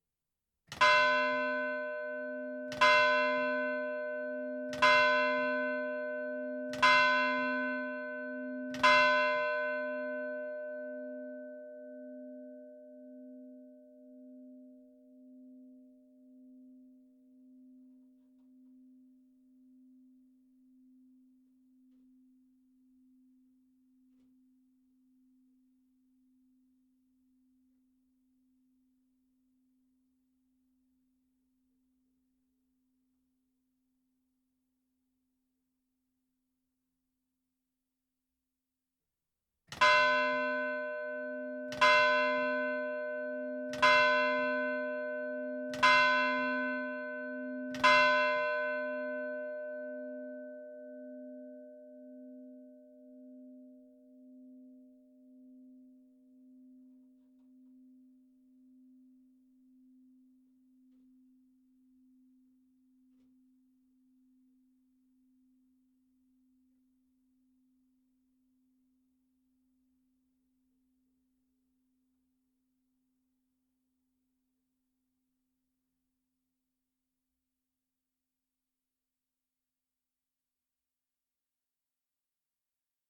Fontaine Simon (Eure et Loir)
Église Notre Dame
17h- Tintement